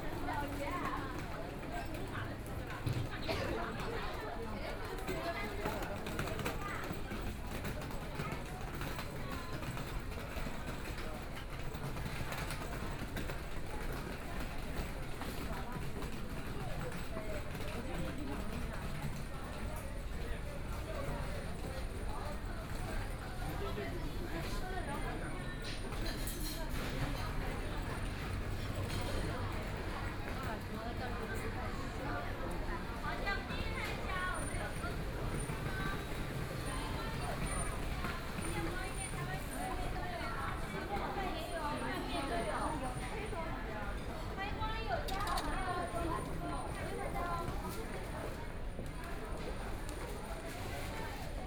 From the Plaza to the underground mall department stores, The crowd, Binaural recording, Zoom H6+ Soundman OKM II